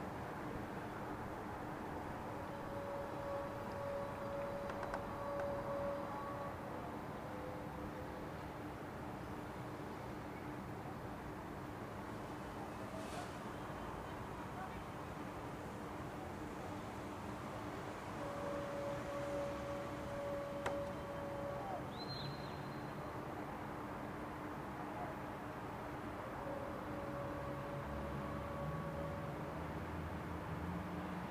Sounds of unknown professions, boza vendour
Boza is a drink of fermented wheat, its origins date back to quite long ago. by now not much more than an ottoman atavism, it is rare to hear somebody like this man passing thorugh the streets, vending a home made version from a big metal vessel and serving portions to people at their windows.